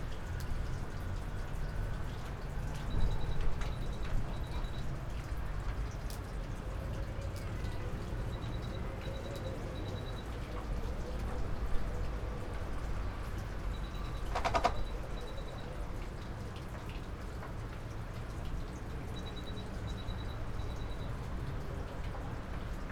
Heraklion Yacht Port - on a pier
port ambience. electric box malfunction, forgotten water hose, roar form the nearby airport, horns form the roundabout